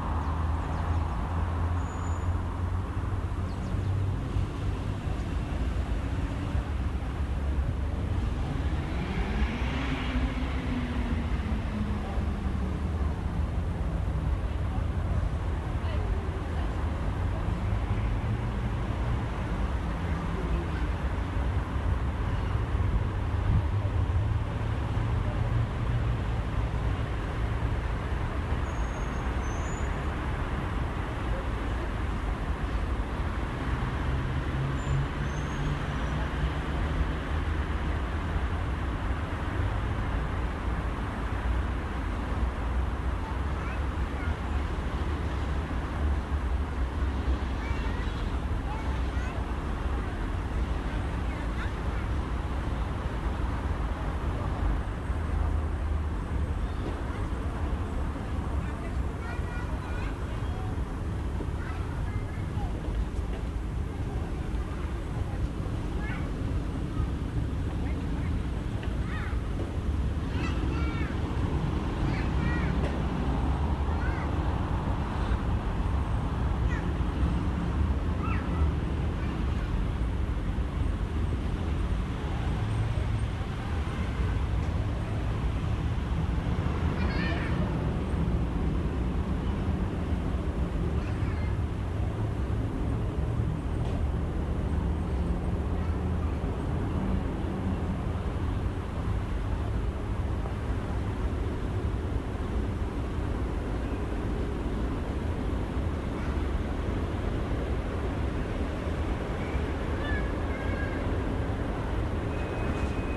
Ambiance in front of the library.